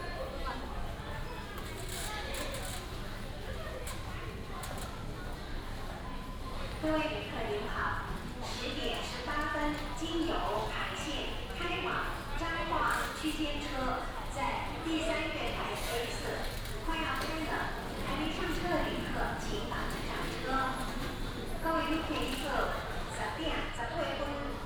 Hsinchu Station - In the station hall
In the station hall, Traffic sound, Station Message Broadcast sound